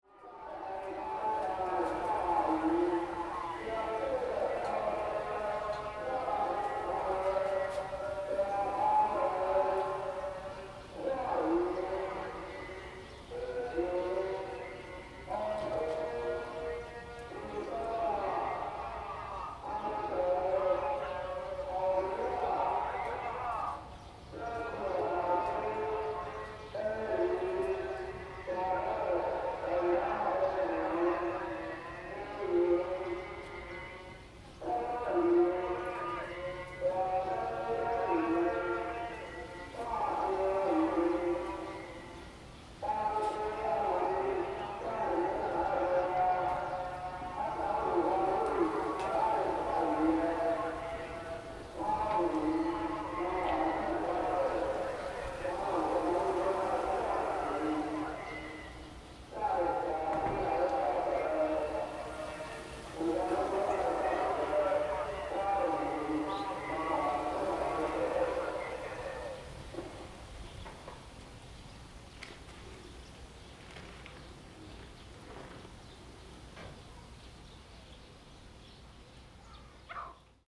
Fanshucuo, Shuilin Township - The sound of the small village radio
The sound of the small village radio, Fish Cargo Market Radio
Zoom H2n MS +XY
Shuilin Township, 雲151鄉道, January 2017